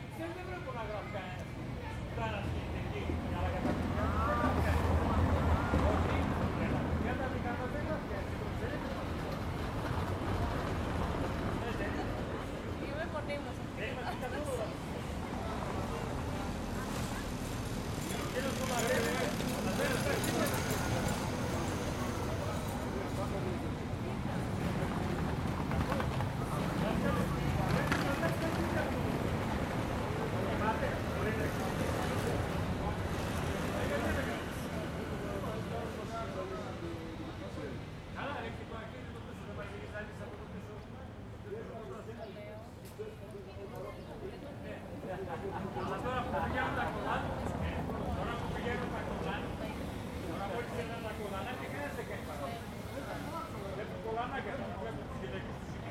Βασ. Κωνσταντίνου, Ξάνθη, Ελλάδα - Antika Square/ Πλατεία Αντίκα- 13:30
Mild traffic, people passing by, talking.
12 May 2020, 13:30